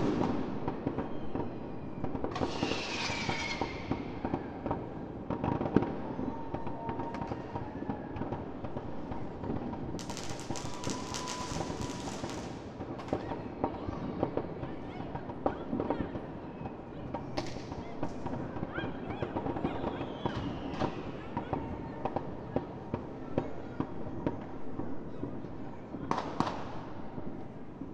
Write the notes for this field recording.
This year it was more firecrackers and bangers instead of fireworks rockets.